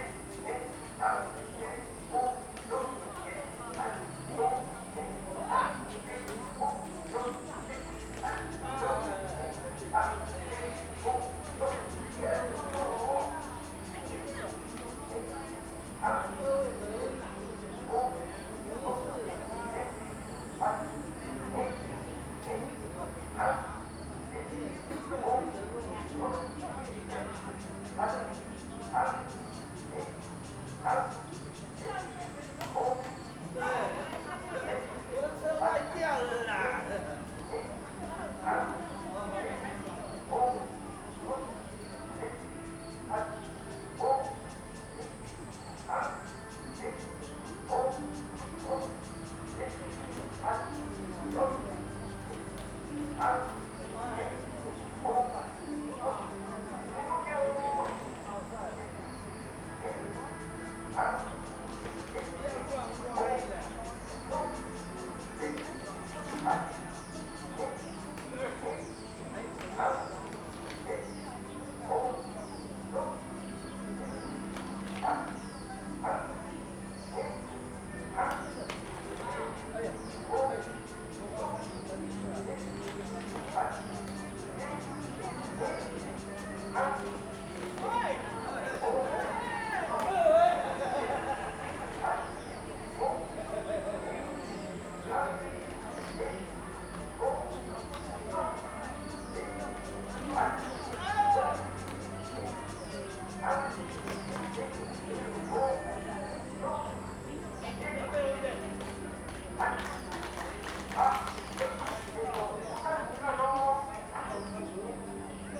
in the Park, Birds and cicadas, A lot of people are doing aerobics
Playing badminton
Zoom H2n MS+XY
Hualien City, Hualien County, Taiwan, 29 August 2014, ~07:00